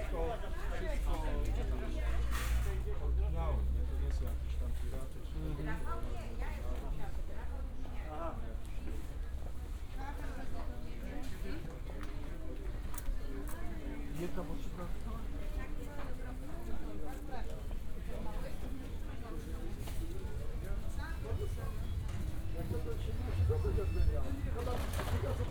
Poznan, Wilda district, Wilda market - before closing time
(binaural) walking around Wilda market on a Saturday afternoon. the place is not busy anymore in this time of the day. almost all vendors are still there but you can sense they are about to close their stands. already sorting things to pack while serving last customers. (Luhd PM-01 into sony d50)